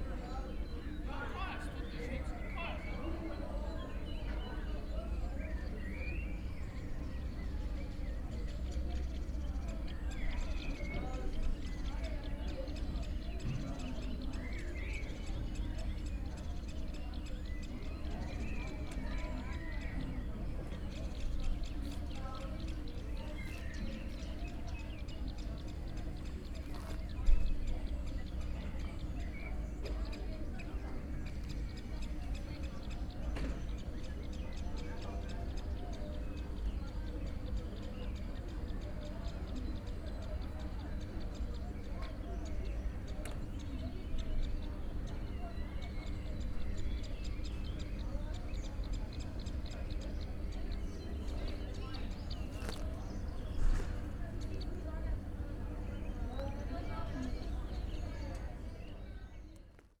classroom sounds in the school yard